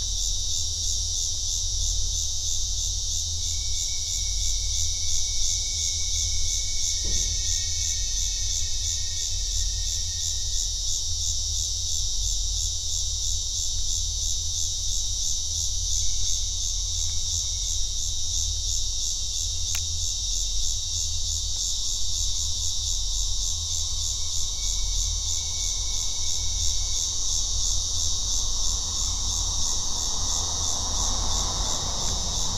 Liberty Rd, Houston, TX, USA - Bugs trains Liberty Road Summer 2021
Texas, United States, September 2021